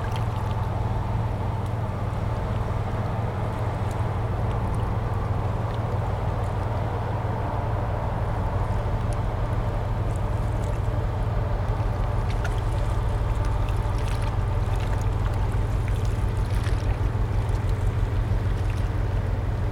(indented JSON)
{
  "title": "Wik, Kiel, Deutschland - Ship on Kiel Canal passing right to left",
  "date": "2016-08-31 19:58:00",
  "description": "Ship on Kiel Canal passing right to left, some birds and waves following the ship, distant train crossing a bridge\nZoom H6 recorder, MS capsule",
  "latitude": "54.37",
  "longitude": "10.09",
  "altitude": "5",
  "timezone": "Europe/Berlin"
}